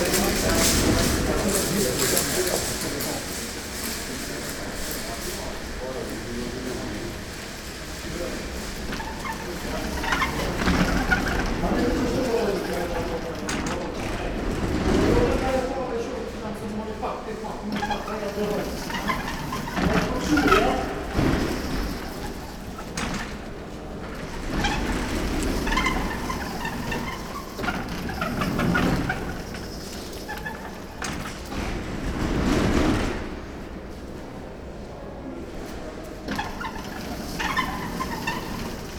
berlin, urban hospital - entry hall door
Urbahnkrankenhaus / Urban Hospital
entry hall, squeaking automatic door, people moving in and out
Berlin, Germany